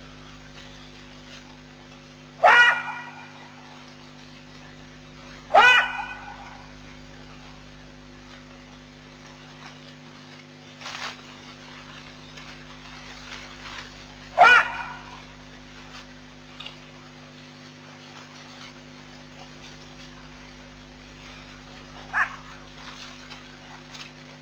Nkorho Bush Lodge, Sabi Sand Game Reserve, Jackals sounds at night
Jackal sounds at Nkorho Bush Lodge at night.